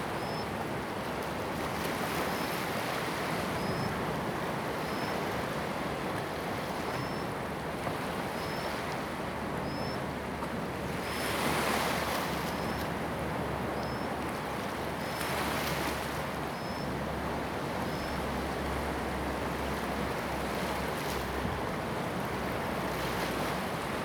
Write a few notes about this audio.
at the seaside, Sound of the waves, Zoom H2n MS+XY